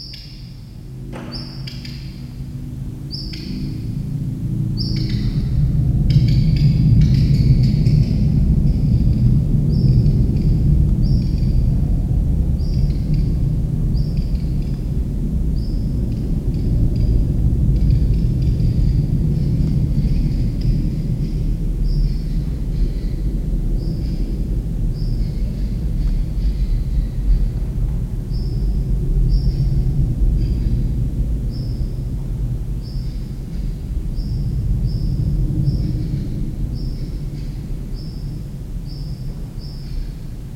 Charleroi, Belgique - Common Redstart
Into the 'AGC Roux' abandoned factory, an angry Common Redstart, longly shouting on different places of a wide hall.
Charleroi, Belgium